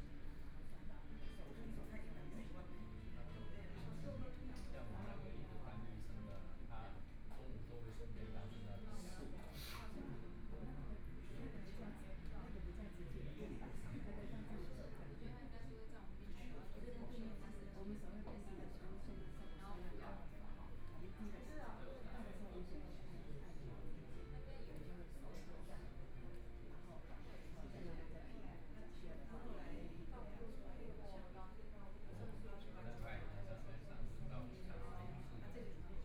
Zhongshan N. Rd., Taipei City - In the coffee shop
In the coffee shop, Binaural recordings, Zoom H4n+ Soundman OKM II
Zhongshan District, Taipei City, Taiwan